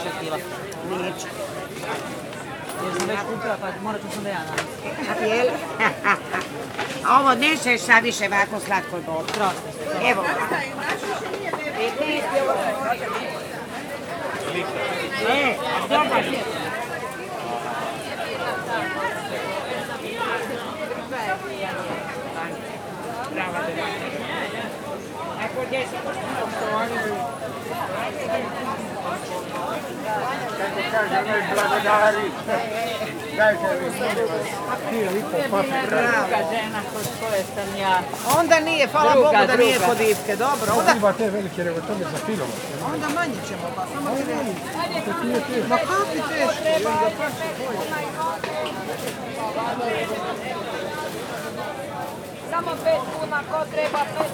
Market Dolac, Zagreb: La joie de vivre
voices from vicinity, socialization thanks to fruits&vegetables
City of Zagreb, Croatia, 24 July 2010